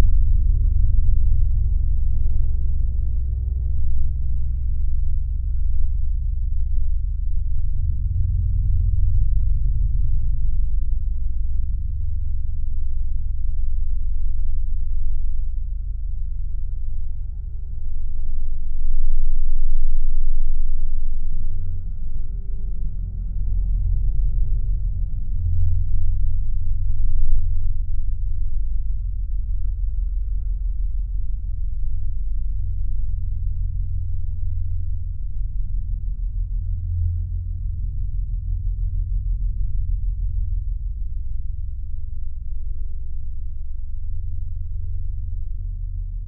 Utena, Lithuania, metallic ornament (low fq)
Some concrete/metallic "sculpture" from soviet times. Geophone applied on metallic part of it. Wind and nearby passing trucks. Low frequency listening.